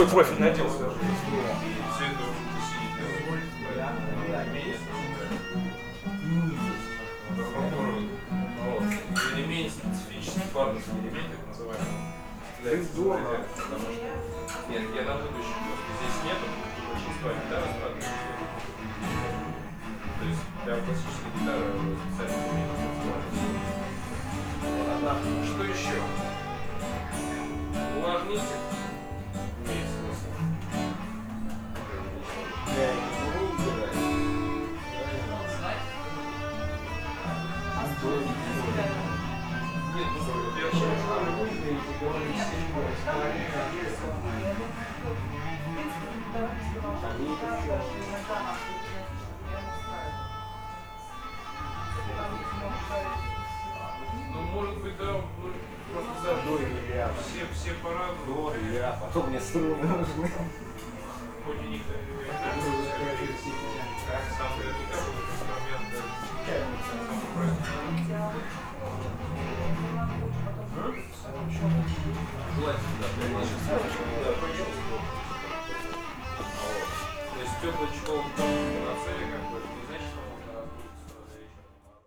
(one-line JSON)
{"title": "Moscow, Sadovaya-Triumfal'naya - Music store", "date": "2010-09-01 19:41:00", "description": "Musicians, music, acoustic guitar, electric guitar, speech.", "latitude": "55.77", "longitude": "37.60", "timezone": "Europe/Moscow"}